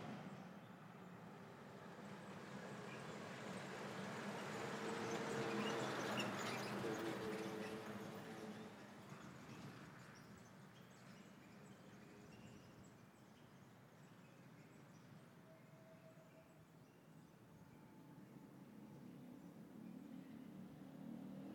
Cl. 75 #28:97, Bogotá, Colombia - little busy environment Bogota
This place is a sidewalk path in a middle stratum neighborhood, located in Bogotá. This place has a little crowded environment where you can hear Cars and motorcycles move from one place to another and it gets pretty close to the microphone.
You can also hear in the distance some dog barking and birds. The audio was recorded in the afternoon, specifically at 8 pm. The recorder that we used was a Zoom H6 with a stereo microphone and a xy technique.
Región Andina, Colombia, 22 May 2021, 08:00